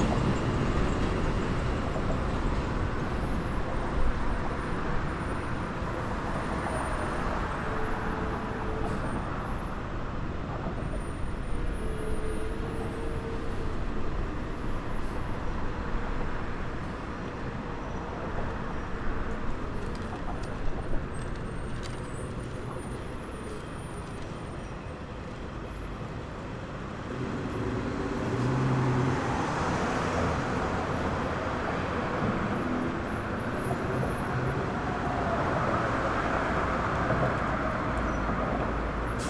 Williamsburg Bridge Bicycle Path, Brooklyn, NY, USA - Williamsburg Bridge - Traffic, Radio and Bikes
Williamsburg Bridge Bicycle Path
Sounds of transit and people crossing the bridge by bike.